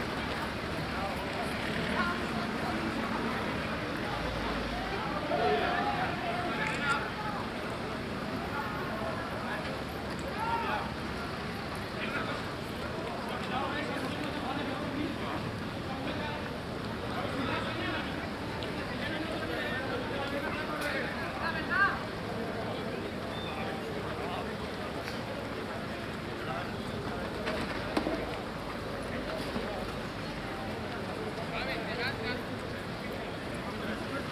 Sevilla, Provinz Sevilla, Spanien - Sevilla - street marathon
On the Calle Parlamento de Andalucia. The sound of the bells of the Macarena Church and hundrets of feets running at a city marathon.
international city sounds - topographic field recordings and social ambiences
Sevilla, Spain, October 2016